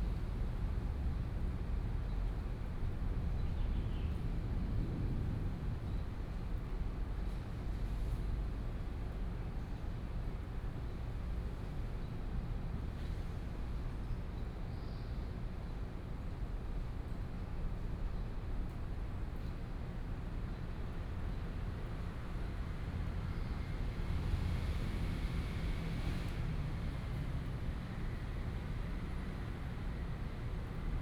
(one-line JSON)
{"title": "中興公園, Xinyi Dist., Taipei City - Thunder sound", "date": "2015-07-23 13:26:00", "description": "in the Park, Thunder sound", "latitude": "25.03", "longitude": "121.56", "altitude": "17", "timezone": "Asia/Taipei"}